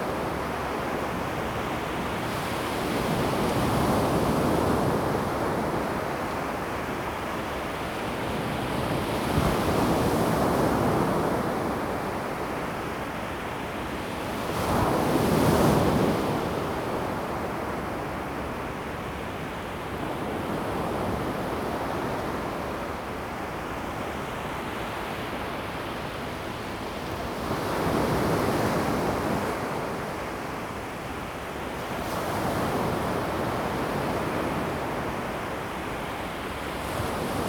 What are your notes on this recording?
Sound of the waves, Beach, Zoom H2n MS+XY